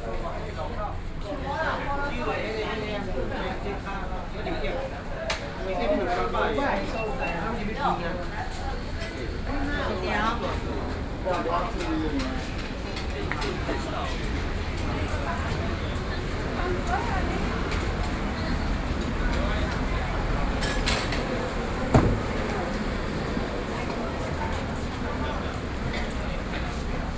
景美街, Wenshan District - Traditional markets